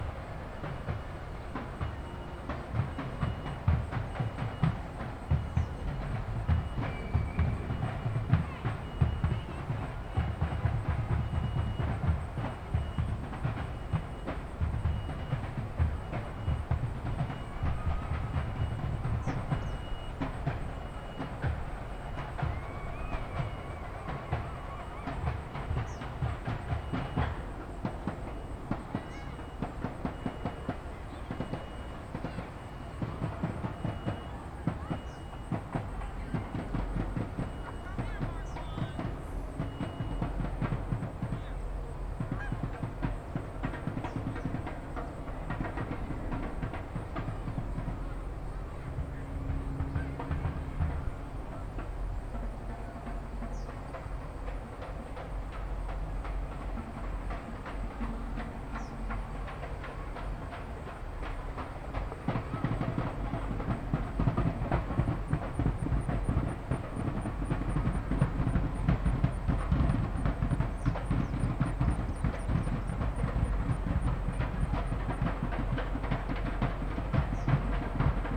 Washington Park, South Doctor Martin Luther King Junior Drive, Chicago, IL, USA - park sounds by pond with drums
Recorded after the Bud Biliken Day Parade, an African American festival in Chicago celebrating the start of the new school year.
August 2013